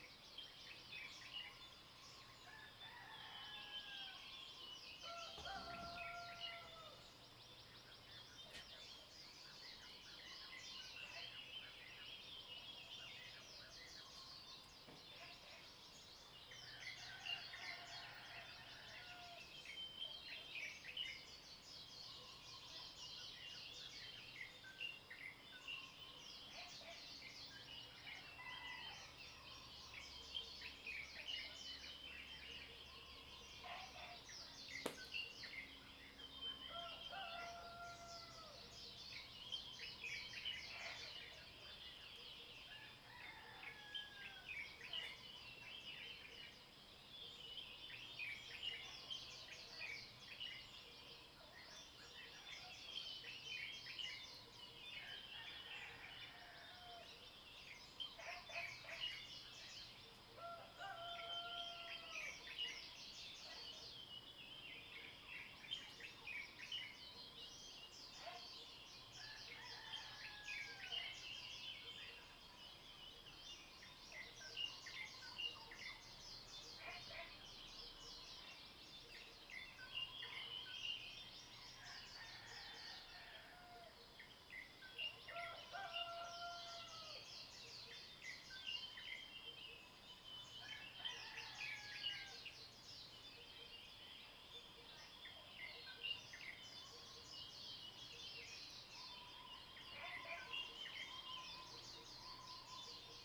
Green House Hostel, 桃米生態村 - Morning in the mountains
Bird calls, Early morning, Chicken sounds, Frogs sound
Zoom H2n MS+XY